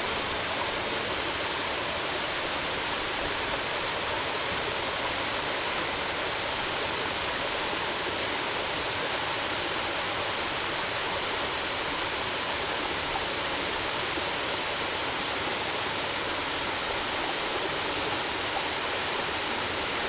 Guanyin Waterfall, Puli Township - waterfall and stream
waterfalls, stream
Zoom H2n MS+ XY
Nantou County, Taiwan, 13 December